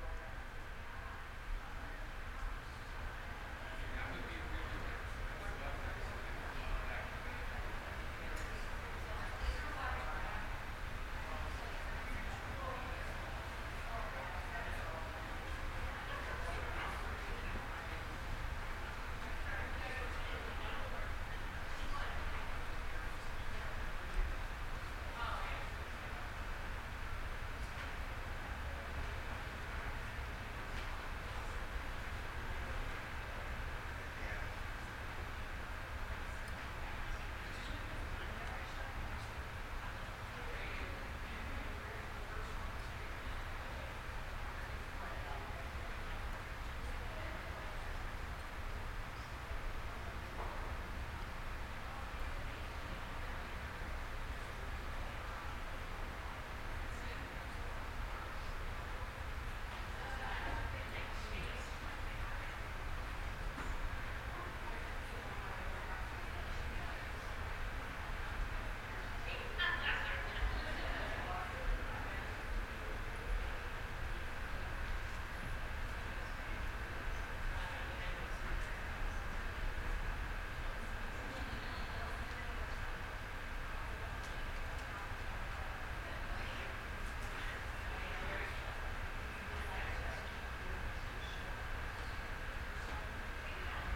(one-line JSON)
{"title": "Ave., Seattle, WA, USA - Doc Maynard's Pub (Underground Tour Intro)", "date": "2014-11-12 11:05:00", "description": "Inside a restored 1890s saloon, a group of about 25 people listen to the introduction to \"Bill Speidel's Underground Tour.\" Patti A. is the tour guide. Stereo mic (Audio-Technica, AT-822), recorded via Sony MD (MZ-NF810).", "latitude": "47.60", "longitude": "-122.33", "altitude": "30", "timezone": "America/Los_Angeles"}